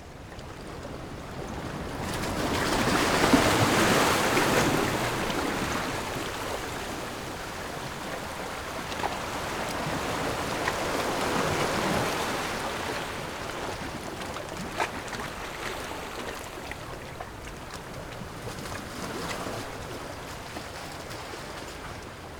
Jizanmilek, Ponso no Tao - Rocky coast
Rocky coast, Small pier, sound of the waves
Zoom H6 +Rode NT4